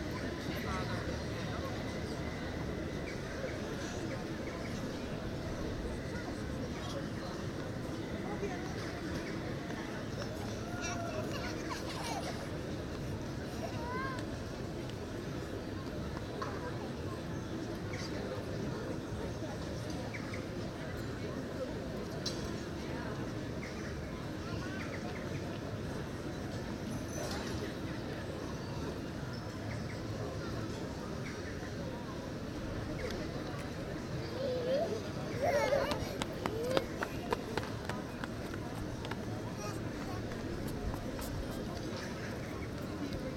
CS, Plein, Den Haag, Nederland - William of Oranges pedestal
William Of Orange's Pedestal (Plein, The Hague, June 4th 2016) - Recorded while sitting on the ledge of the pedestal of William Of Orange's statue on the Plein, The Hague. Binaural recording (Zoom H2 with Sound Professionals SP-TFB-2 binaural microphones).
Zuid-Holland, Nederland, 4 June 2017